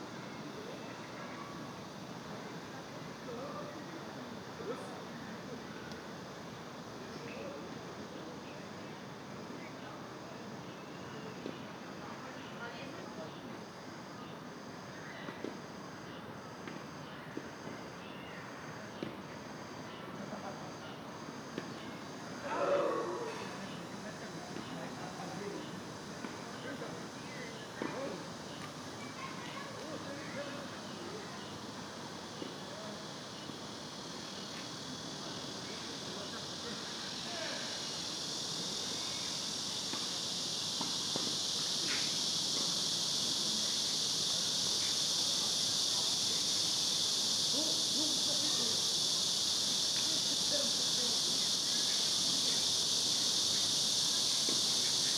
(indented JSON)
{
  "title": "대한민국 서울특별시 서초구 양재동 126-1 - Yangjae Citizens Forest, Tennis Court, Magpie, Cicada",
  "date": "2019-08-07 14:03:00",
  "description": "Yangjae Citizens Forest, Tennis Court, Magpie, Cicada\n양재시민의숲, 테니스치는 사람들, 까치, 매미",
  "latitude": "37.47",
  "longitude": "127.04",
  "altitude": "24",
  "timezone": "Asia/Seoul"
}